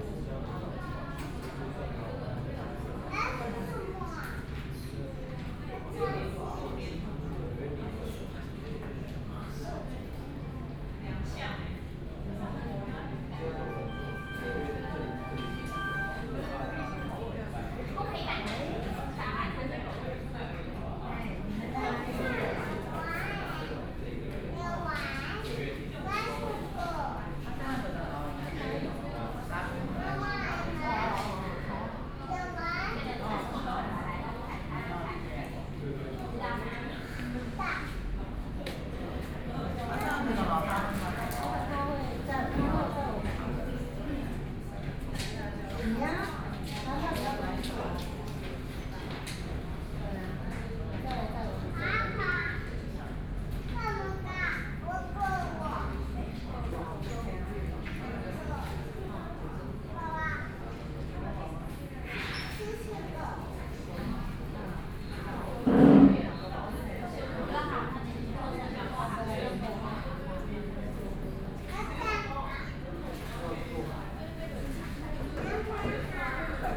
{
  "title": "MOS BURGER, Jungli City - Child with mom",
  "date": "2013-09-16 15:12:00",
  "description": "Child with mom, In the fast food inside, voice conversation, Sony PCM D50 + Soundman OKM II",
  "latitude": "24.96",
  "longitude": "121.23",
  "altitude": "148",
  "timezone": "Asia/Taipei"
}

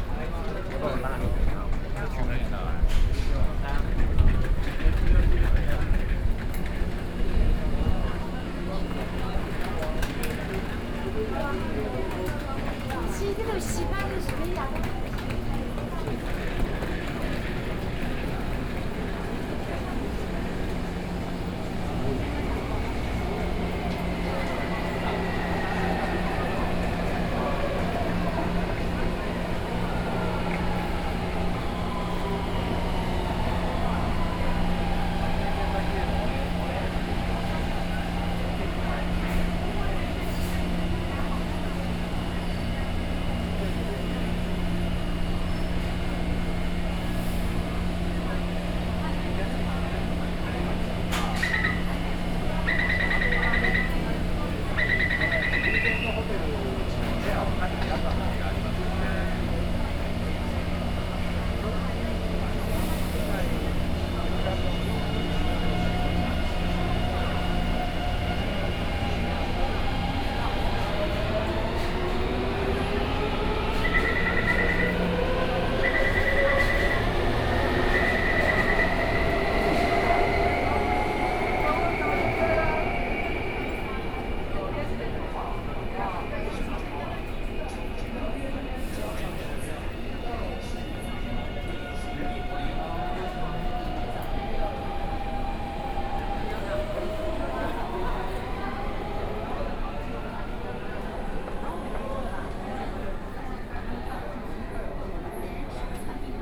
{
  "title": "Zhongxiao Fuxing Station, Taipei - soundwalk",
  "date": "2013-09-30 18:33:00",
  "description": "From the station on the ground floor, Then through the department store, Into the station's underground floors, Sony PCM D50 + Soundman OKM II",
  "latitude": "25.04",
  "longitude": "121.54",
  "altitude": "13",
  "timezone": "Asia/Taipei"
}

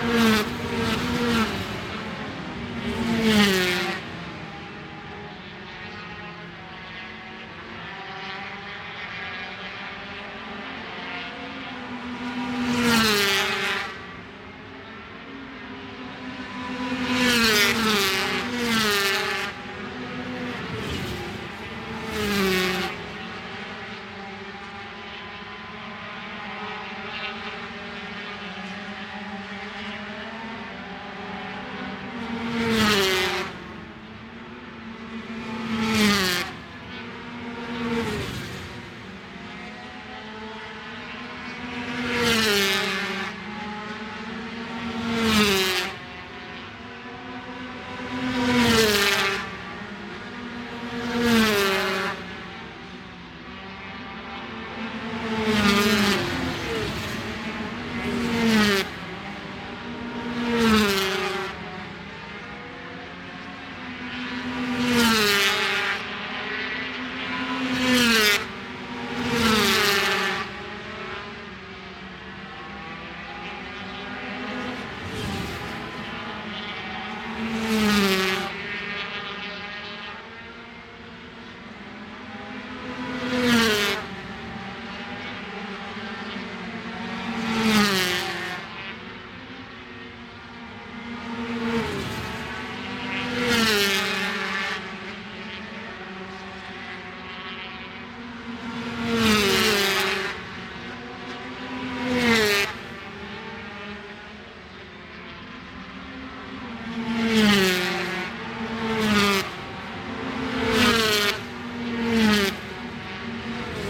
{
  "title": "Scratchers Ln, West Kingsdown, Longfield, UK - British Superbikes 2005 ... 125 ...",
  "date": "2005-03-26 16:10:00",
  "description": "British Superbikes 2005 ... 125 free practice two ... one point stereo mic to minidisk ...",
  "latitude": "51.36",
  "longitude": "0.26",
  "altitude": "133",
  "timezone": "GMT+1"
}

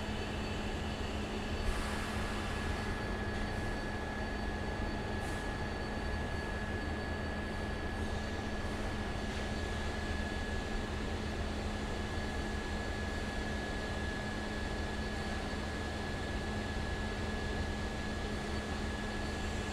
{
  "title": "Zamenhofstraat, Amsterdam, Nederland - Wasted Sound Albermale",
  "date": "2019-11-06 12:02:00",
  "description": "Wasted Sound of a factory",
  "latitude": "52.39",
  "longitude": "4.93",
  "altitude": "9",
  "timezone": "Europe/Amsterdam"
}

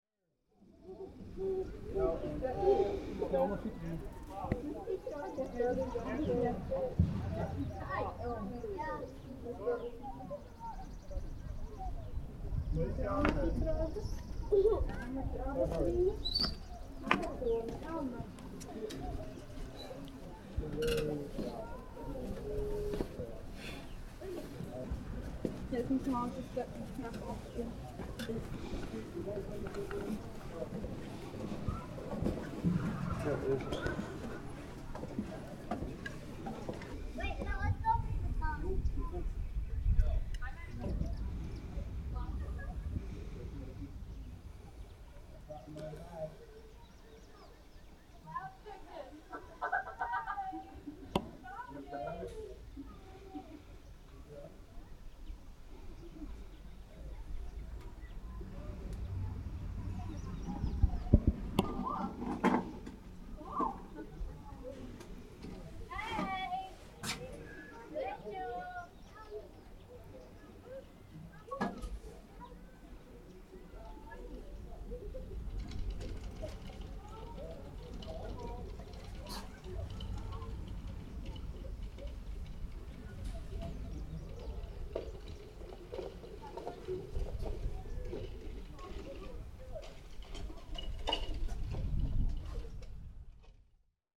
{
  "title": "Firetower, Ouabache State Park, Bluffton, IN, USA (recording by Linda Bowman) - Sounds from the firetower, Ouabache State Park, Bluffton, IN 46714, USA (recording by Linda Bowman)",
  "date": "2019-07-21 15:30:00",
  "description": "Audio recorded by Linda Bowman. Sounds from the firetower at Ouabache State Park. Recorded at an Arts in the Parks Soundscape workshop at Ouabache State Park, Bluffton, IN. Sponsored by the Indiana Arts Commission and the Indiana Department of Natural Resources.",
  "latitude": "40.72",
  "longitude": "-85.11",
  "altitude": "261",
  "timezone": "America/Indiana/Indianapolis"
}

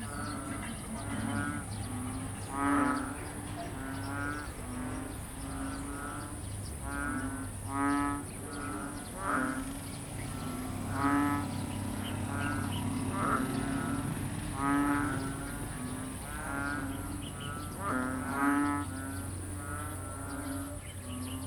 {
  "title": "San Francisco, Biñan, Laguna, Filippinerna - Biñan Palakang Bukid #3",
  "date": "2016-07-17 13:06:00",
  "description": "Some day after heavy rain, there is less activity of the frogs in the neighbouring fields, still accompanied by motor sounds from the nearby Halang Rd with tricycles, cars and motorcycles. Palakang bukid is the filipino name of this frog.",
  "latitude": "14.33",
  "longitude": "121.06",
  "altitude": "13",
  "timezone": "Asia/Manila"
}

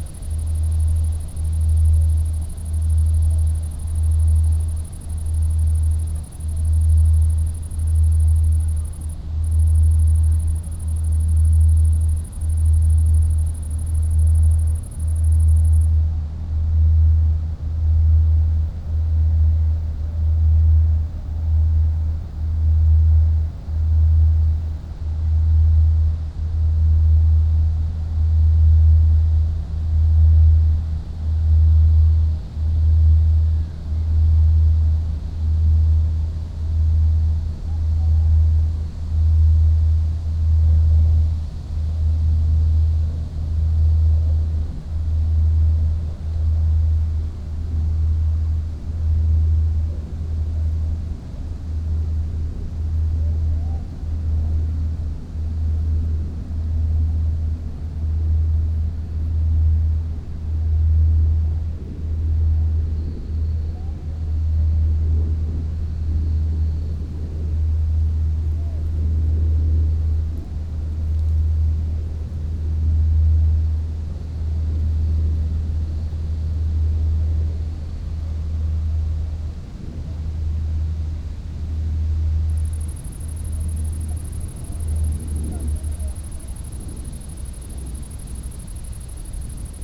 {
  "title": "Rhein river bank, Jugendpark, Köln, Deutschland - ship drone, cricket, wind",
  "date": "2019-07-18 20:25:00",
  "description": "rythmic ship drone, but the ship is already a kilometer away. a cricket close\n(Sony PCM D50, Primo EM172)",
  "latitude": "50.96",
  "longitude": "6.99",
  "altitude": "39",
  "timezone": "Europe/Berlin"
}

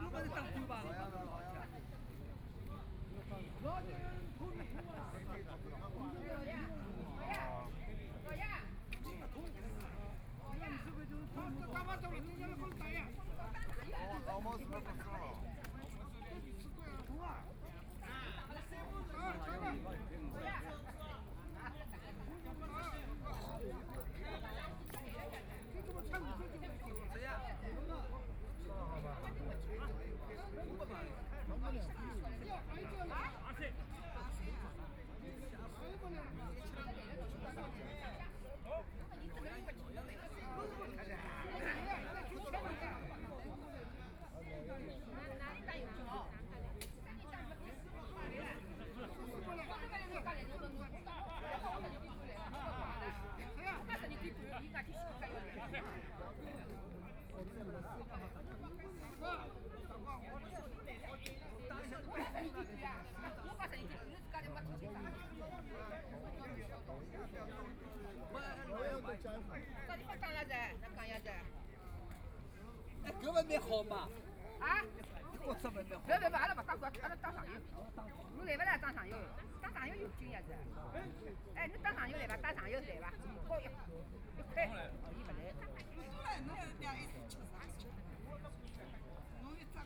{
  "title": "Penglai Park, Shanghai - chatting",
  "date": "2013-11-29 12:39:00",
  "description": "Many elderly people gathered in the sun chatting and playing cards ready, Trumpet, Binaural recording, Zoom H6+ Soundman OKM II",
  "latitude": "31.21",
  "longitude": "121.49",
  "altitude": "8",
  "timezone": "Asia/Shanghai"
}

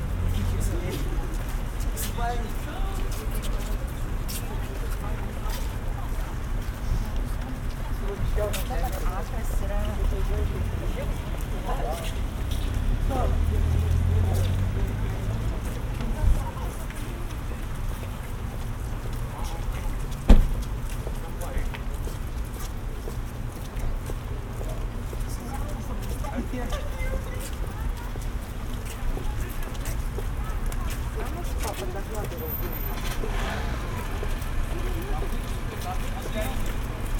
{
  "title": "Ozo g. 18, Vilnius, Lithuania",
  "date": "2022-05-28 17:00:00",
  "description": "Entrance to mall. Recorded with Sennheiser ambeo headset.",
  "latitude": "54.72",
  "longitude": "25.28",
  "altitude": "119",
  "timezone": "Europe/Vilnius"
}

